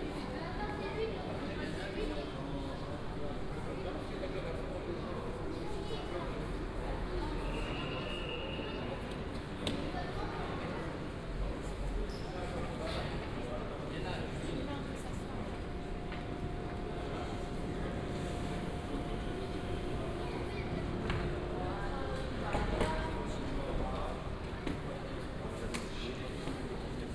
Gare d'Aix-en-Provence TGV, Aix-en-Provence, France - hall ambience, walk
TGV train station ambience, Saturday morning, people waiting for departure
11 January 2014, ~08:00